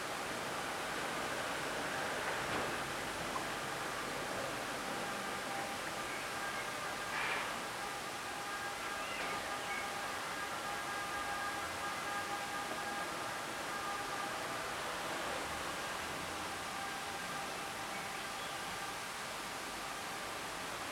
L'Aquila, Fontana Luminosa - 2017-05-29 12-Fontana Luminosa
29 May 2017, 16:34